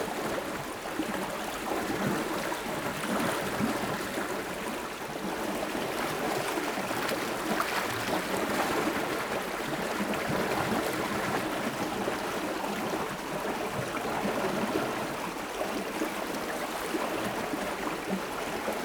Fish weir and boat roll, Lübbenau, Germany - Water cascading down the fish weir
The fish weir allows fish to move up and down levels in small jumps, although I did not see this happening. Small boats and canoes must be manhandled up and down by dragging over the rollers. I did not see this either.
29 August, 16:03